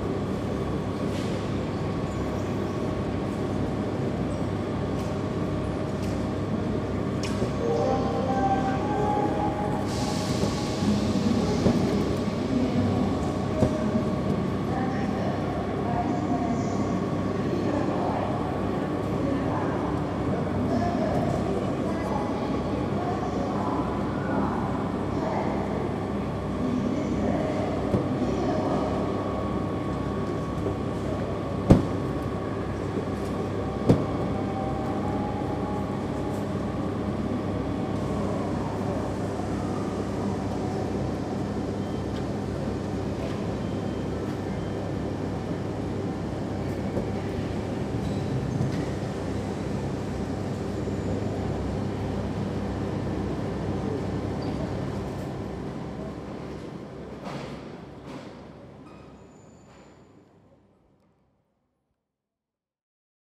General atmosphere Gare Du Nord, Paris.